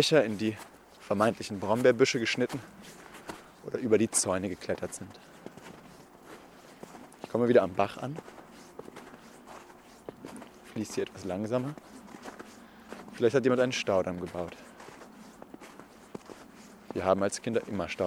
Erinnerungsspaziergang am Mühlenbach
Ein Erinnerungsspaziergang, dessen Eindrücke direkt festzuhalten versucht wurden. Orte der Kindheit sind melancholische Orte, wenn man sie wieder aufsucht, sie verursachen jene Unruhe, sich nicht mehr sicher zu sein. So hält man sich an Bildern fest, denen man Ewigkeit zuschreiben möchte, aber meistens sieht dort alles schon ganz anders aus.
Bonn, Germany, 20 December 2010